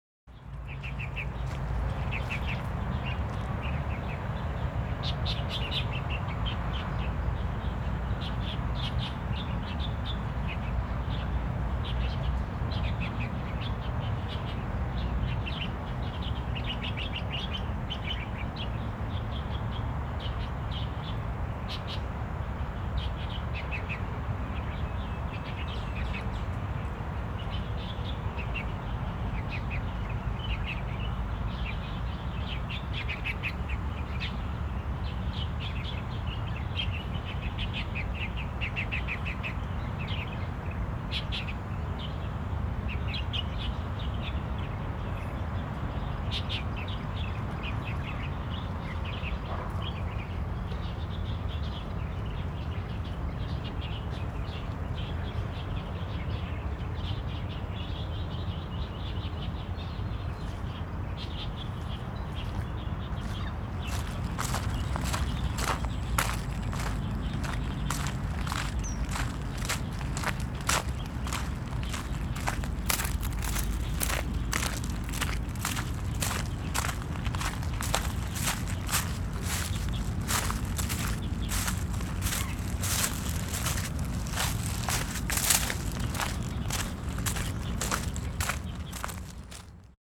Huanhe W. Rd., Banqiao Dist., New Taipei City - Wetlands
Wetland, Bird calls
Rode NT4+Zoom H4n